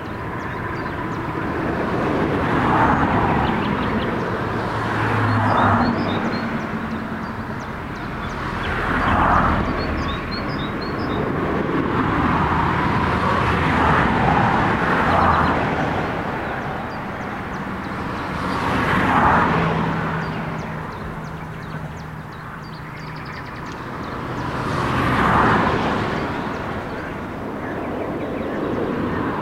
Leipziger Str., Lutherstadt Wittenberg, Deutschland - Leipziger Str., 06888 Lutherstadt Wittenberg 220510-105245
Sachsen-Anhalt, Deutschland, 10 May 2022, 10:50